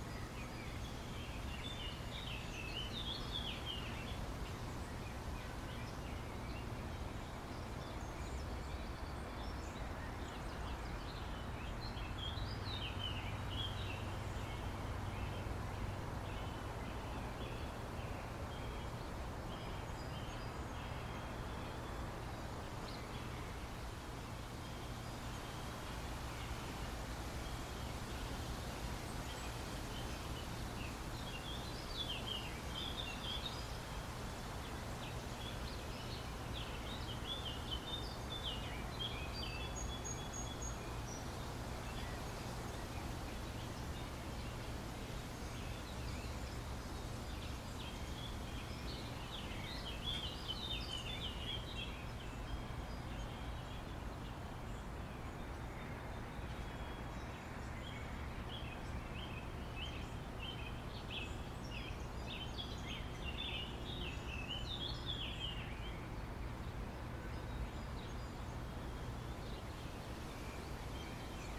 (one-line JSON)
{"title": "Victoria St, Kingston, ON, Canada - My Backyard 12:16pm", "date": "2020-09-16 12:16:00", "description": "This is the sounds of my backyard :)\nPretty peaceful am I right", "latitude": "44.24", "longitude": "-76.50", "altitude": "101", "timezone": "America/Toronto"}